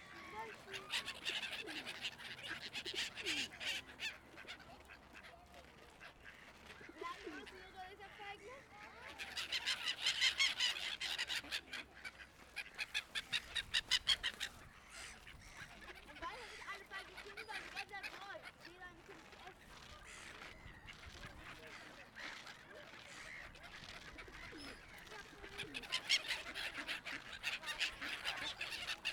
Stadspark, Antwerpen, België - Soundwalk in Stadspark Antwerpen
Soundwalk through Stadspark in Antwerp. I started my walk close to a skatepark and stayed there for a few minutes. Then I walked through the playground to the lake where they were feeding ducks and other birds. At the end I walked to the street. Only a 300m walk with different sound worlds. Also remarkable is a lot of languages: From "Plat Antwaarps" to Yiddish. It was recorded with an XY H4 stereo microphone. I used my scarf as wind protection
Vlaams Gewest, België - Belgique - Belgien, European Union, 1 April